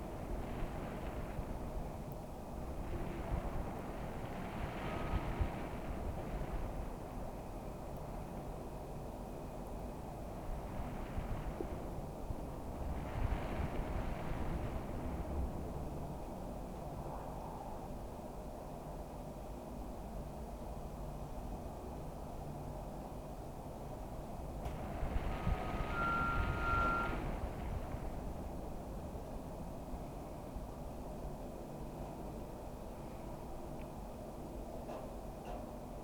Poznan, Mateckiego street, balcony window - wind in unison mode
wind whistling through a small slit in a slightly bent balcony window. around 1:45 mark wind intensifies and it sounds as if an ensemble of harmonicas were playing in unison. (roland r-07)